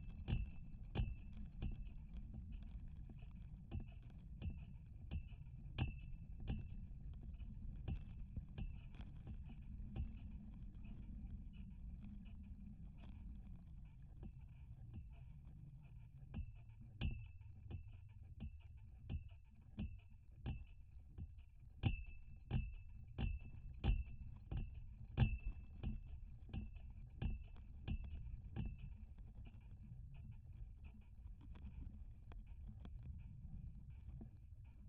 contact microphones on the flagstick
Lithuania, 5 June 2016